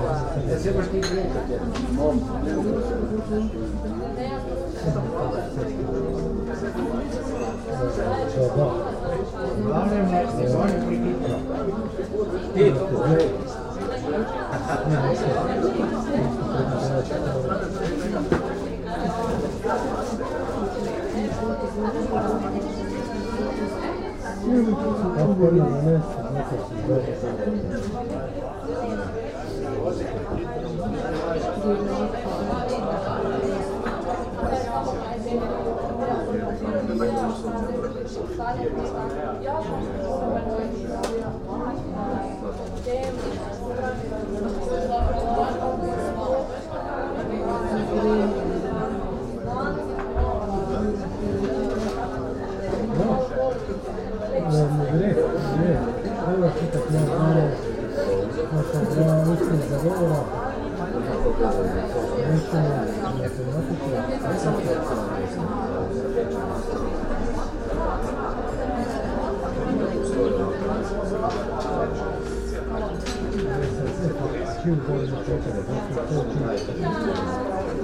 Muzikafe, Ptuj, Slovenia - cafe terrace on a tuesday morning
from a window in a ptuj hostel just over their busy cafe terrace on a hot weekday morning